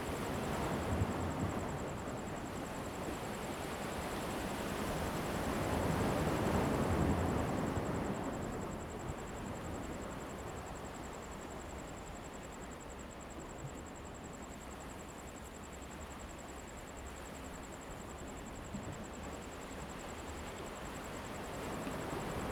Waterfront Park at night, Sound of the waves
Zoom H2n MS+XY